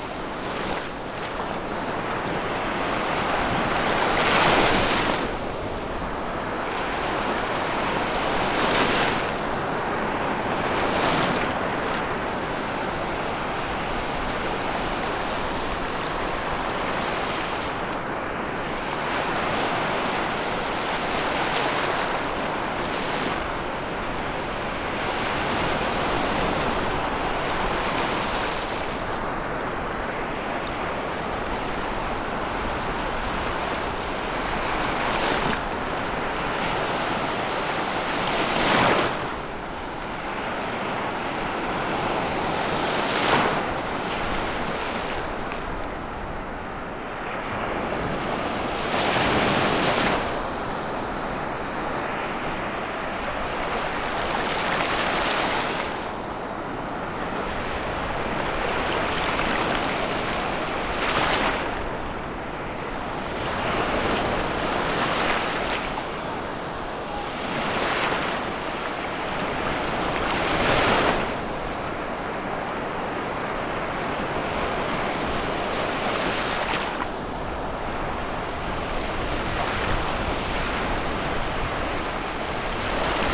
Orzechowo, at the beach - waves over rocks
medium size waves washing over medium size rocks
Poland, 15 August 2015